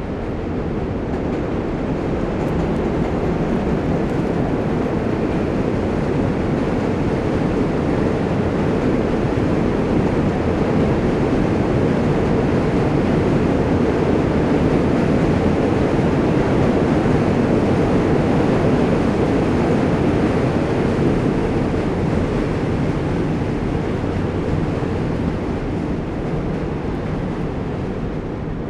New York Manhattan Bridge
Metro sound under the Manhattan bridge in NYC